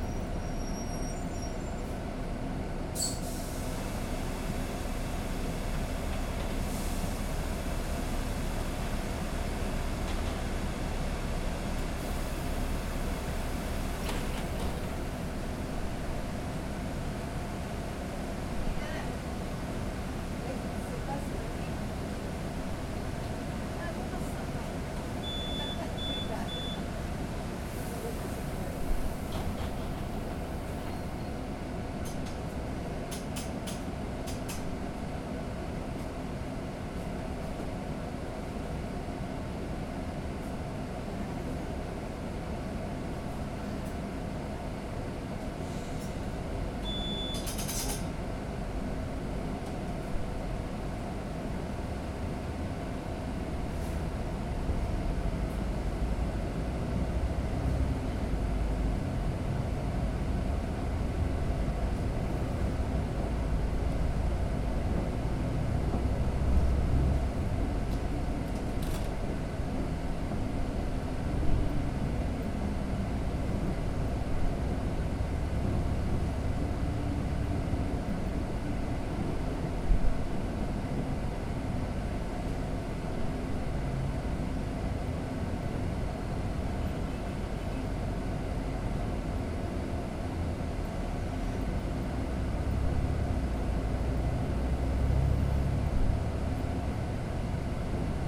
R. Joaquim Távora - Vila Mariana, São Paulo - SP, 04015-012, Brasil - Andando de ônibus
Paisagem sonora do interior de um ônibus que vai da Joaquim até a Av. Santo Amaro no horário de almoço.